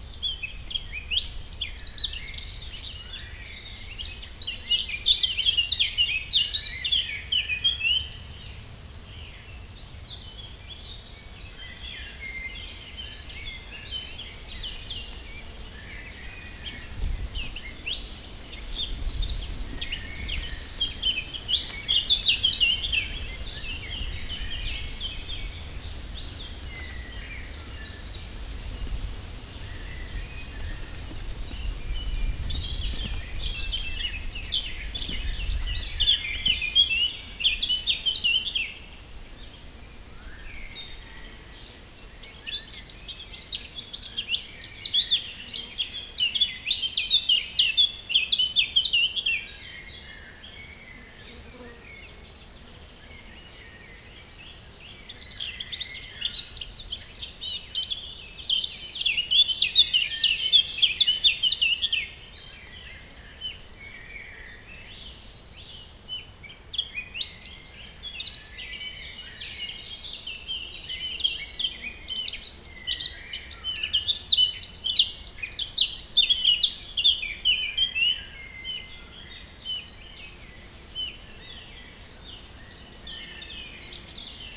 Veuvey-sur-Ouche, France - Bouhey forest
Passing through the Bouhey forest in Veuvey-Sur-Ouche, a clearing was full of birds shouts. This happy landscape made me think to put outside the recorder. Although the site is drowned by a significant wind, springtime atmosphere with Eurasian Blackcap and Common Chiffchaff is particularly pleasant. Regularly hornbeam branches clashes.
La Bussière-sur-Ouche, France